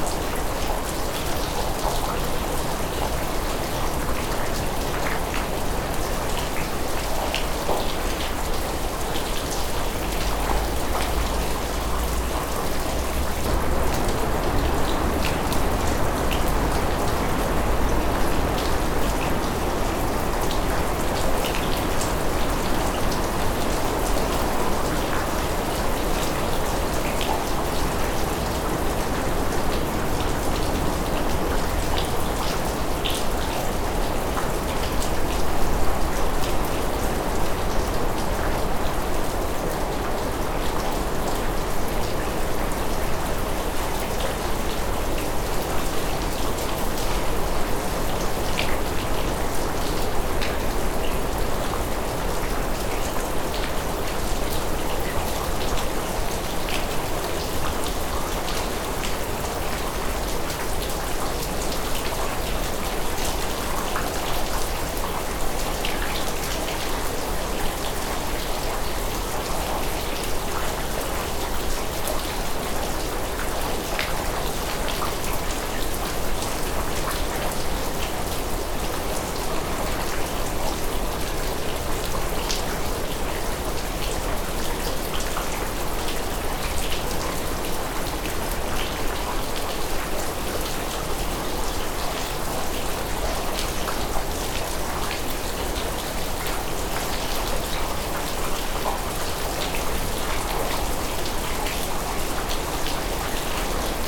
Court-St.-Étienne, Belgique - A rainy day
A morning rain, near a farm called "ferme de Sart". Early in this morning, all is quiet.
Court-St.-Étienne, Belgium, 8 January 2015